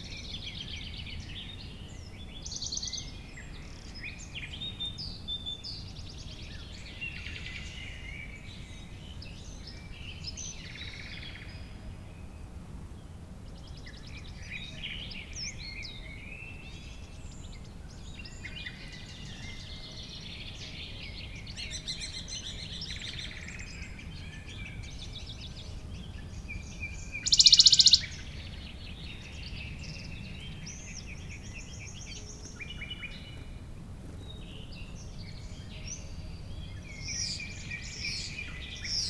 Valonsadero, Soria, Spain - Paisagem sonora do Parque Natural de Valonsadero - A Soundscape of Valonsadero Natural Park
Paisagem sonora do Parque Natural de Valonsadero em Soria, Espanha. Mapa Sonoro do Rio Douro. Soundscape of Valonsadero Natural Park in Soria, Spain. Douro river Sound Map.
12 February